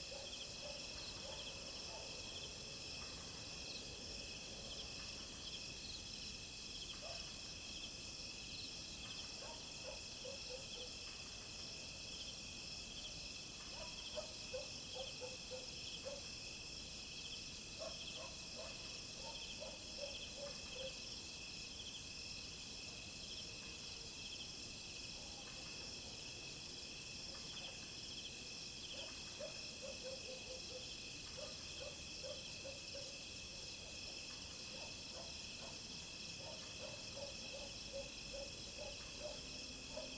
介達國小, Zhengxing, Jinfeng Township - Night school
Night school, Dog barking, Frog croak, Bugs, traffic sound
Zoom H2n MS+XY